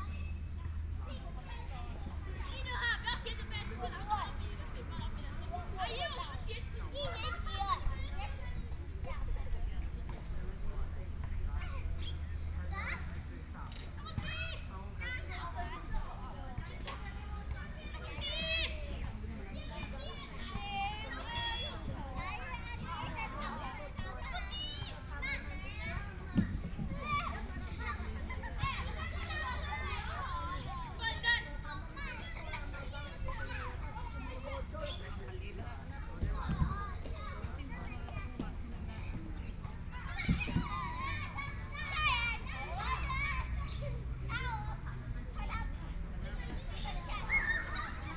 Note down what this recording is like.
Birdsong, in the Park, The weather is very hot, Children and the elderly, Binaural recordings